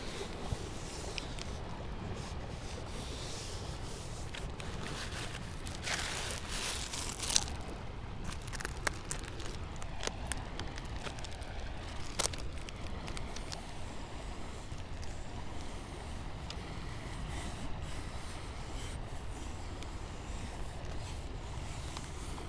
river Drava, Dvorjane - gravel walk, summer